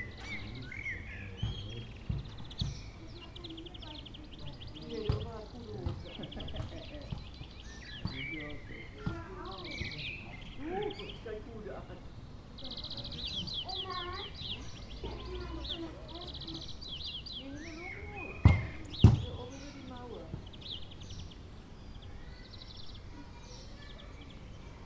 21.05.2009 Beselich Niedertiefenbach, Feiertag, Gärten zwischen Häusern
holiday, gardens between houses
Beselich, Germany, 21 May 2009